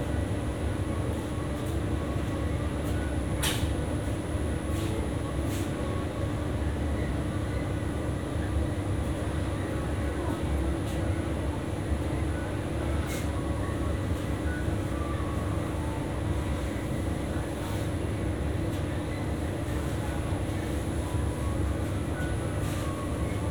Poznan, Jana III Sobieskiego housing estate - drycleaner’s
binaural recording. standing in front of a 24h drycleaner’s. conversations of the staff and radio choked by hum of commercial washing machines.